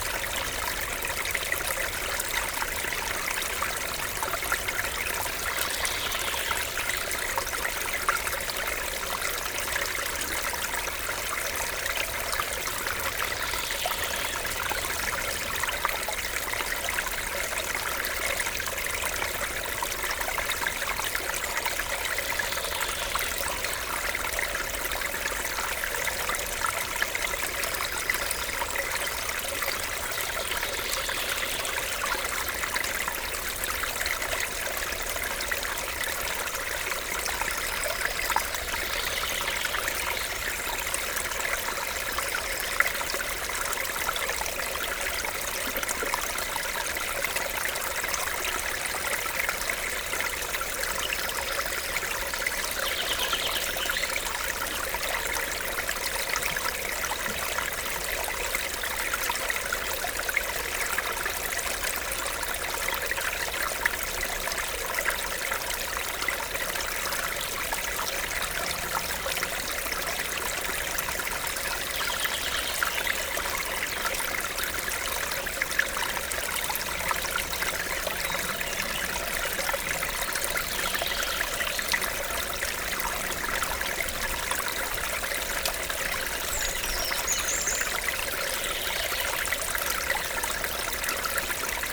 Court-St.-Étienne, Belgique - Ry Sainte-Gertrude stream
The ry Sainte-Gertrude river, a small stream in the woods. Very quiet ambience, woods, water and birds.